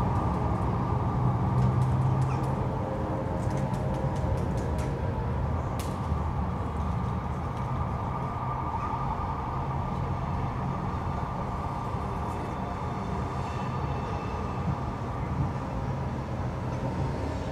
Gdyńskich Kosynierów, Danzig, Polen - Ulica Gdyńskich Kosynierów, Gdańsk - different sirens
Ulica Gdyńskich Kosynierów, Gdańsk - different sirens. [I used Olympus LS-11 for recording]